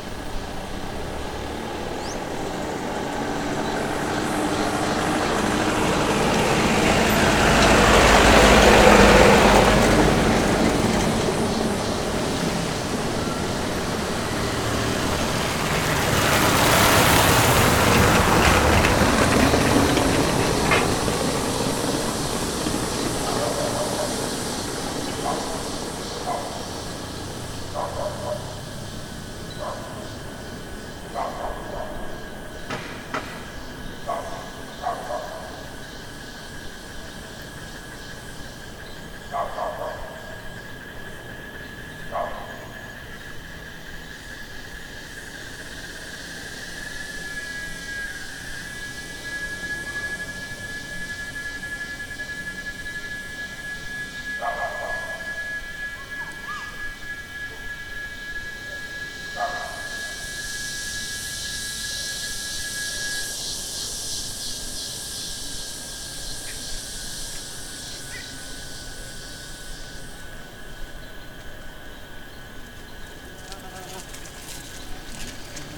Cruz das Almas, Bahia, Brazil - O som da Mata
Captação de audio no Parque Ambiental Mata de Cazuzinha uma reserva florestal localizado no bairro Ana Lúcia em Cruz das Almas Bahia Brazil a captação foi nos dia 04 de Março de 2014 as 18:30h, Atividade da disciplina de Sonorização ministrada pela docente Marina Mapurunga do Curso de Cinema e Audiovisual da Universidade Federal do Recôncavo da Bahia UFRB CAMPUS LOCALIZADO NA CIDADE DE cachoeira Bahia Brazil, Equipamento utilizado foi o gravador de audio Tascam Dr40 formato em Wave 16 bits 44.100 khz.